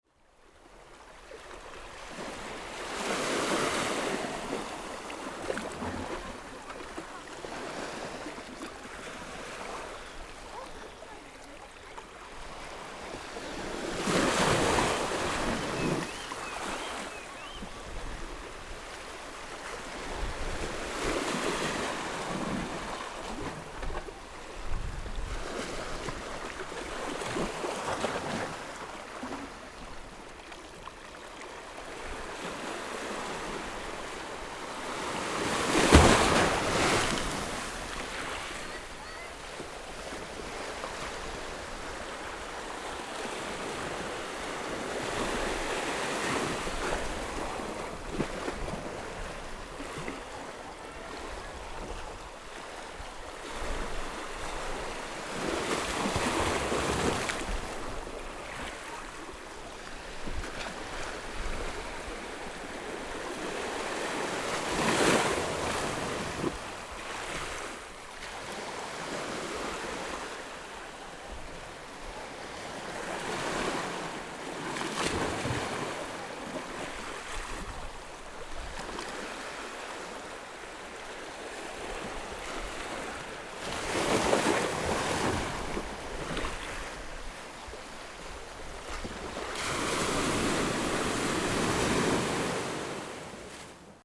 磯崎村, Fengbin Township - Small pier
Small pier, sound of water streams, The weather is very hot
Zoom H6 MS+ Rode NT4
Hualien County, Fengbin Township, 花東海岸公路